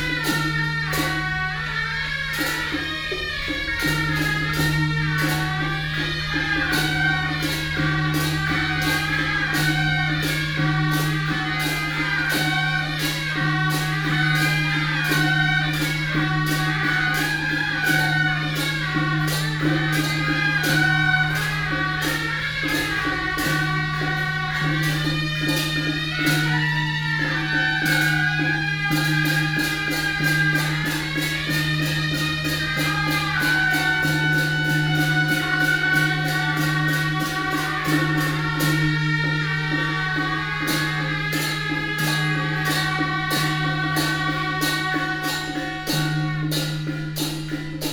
中壢永福宮, Zhongli Dist., Taoyuan City - Din TaoßLeader of the parade
Din TaoßLeader of the parade, Traffic sound, In the square of the temple